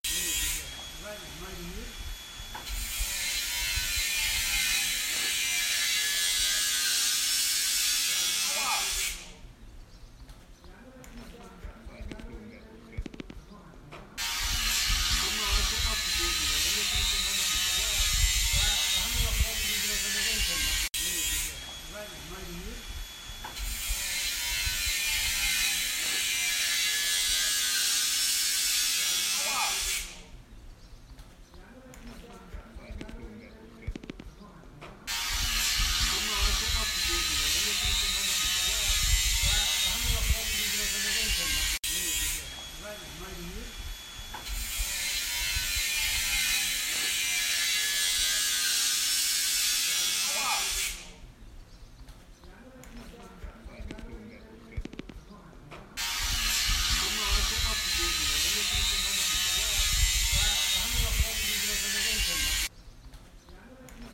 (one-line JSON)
{"title": "Eichenstraße, Elsdorf, Deutschland - Werkstattgeräusche", "date": "2019-07-20 13:00:00", "description": "Werkstattgeräusche. Eine Flex in Verwendung in der Werkstatt des Lüttenshoff", "latitude": "53.27", "longitude": "9.40", "altitude": "35", "timezone": "Europe/Berlin"}